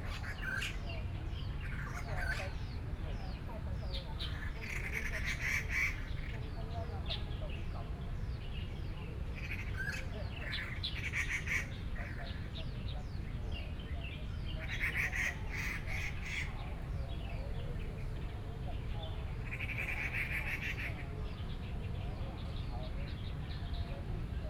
Zhongshan Park, 羅東鎮集祥里 - Walking through the park
Walking through the park, Traffic Sound, Birdsong sounds
Sony PCM D50+ Soundman OKM II